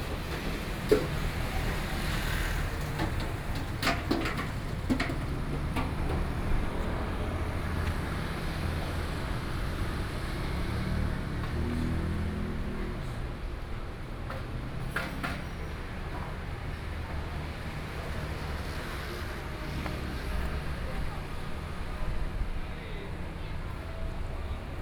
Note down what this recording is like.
Various shops voices, Traffic Sound, walking on the Road, Sony PCM D50+ Soundman OKM II